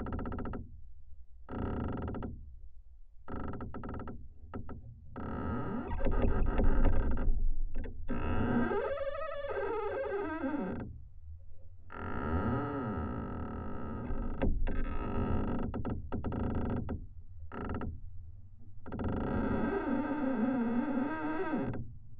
Galeliai, Lithuania, cello tree
Again: recording of squeaking pine tree. Contact microphones.
16 April 2021, ~16:00